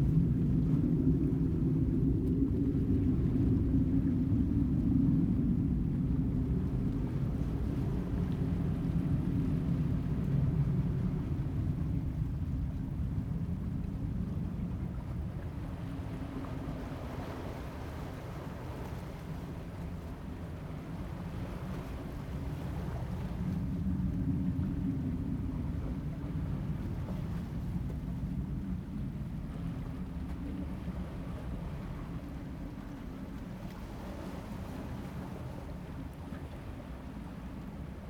港南風景區, Xiangshan Dist., Hsinchu City - Sound of the waves and fighters
Sound of the waves, There are fighters taking off in the distance, Zoom H2n MS+XY